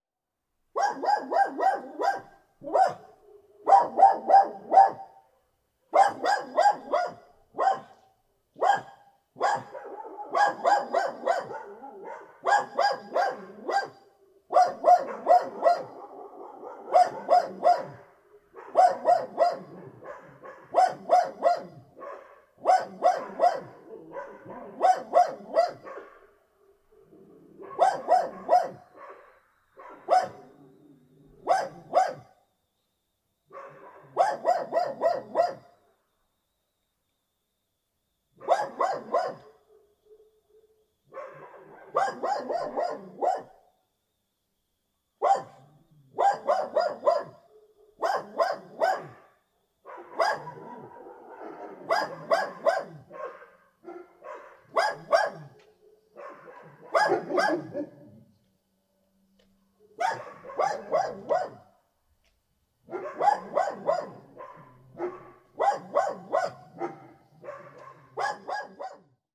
Park, Stary Sącz, Poland - (-194) Dog barking at night
Recording of a barking dog at night in a calm neighborhood.
województwo małopolskie, Polska, 16 November 2013